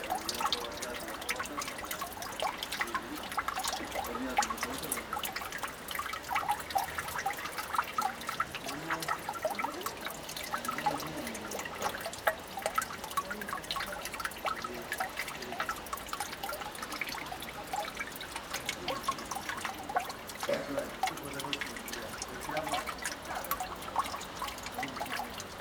Milano, Italy - rain collected in the hole
rain in a quiet street collected in a manhole
10 November 2012